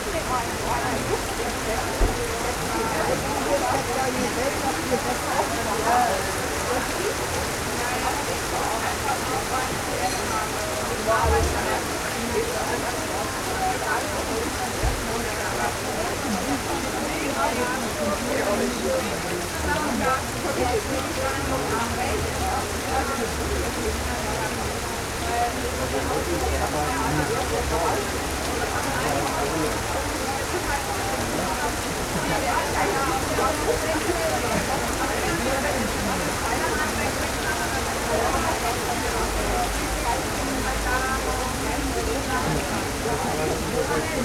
rain hitting the plastic roof after thunderstorm
the city, the country & me: july 7, 2012
99 facets of rain
Berlin, Germany, 7 July 2012, ~15:00